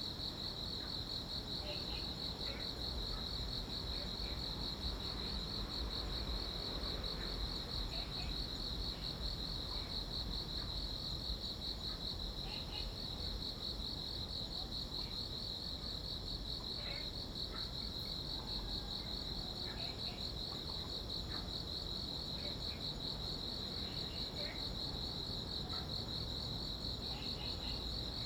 福州山公園, Taipei City, Taiwan - Sound of insects
In the park, Sound of insects, Frog sound
Zoom H2n MS+XY
5 July